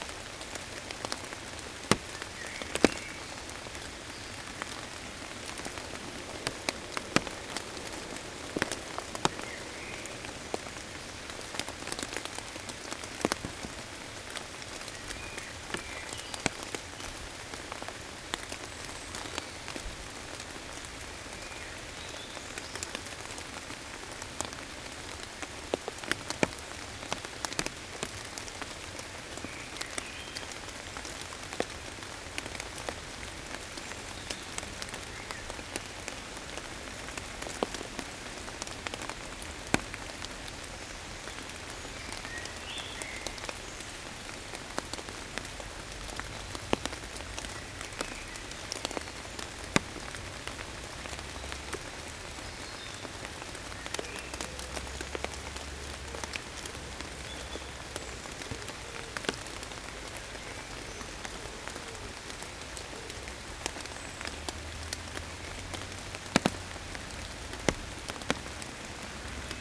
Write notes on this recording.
A constant rain is falling since this morning. All is wet everywhere. In the forest, birds are going to sleep, it's quite late now. The rain is falling on maple leaves. Water tricle everywhere.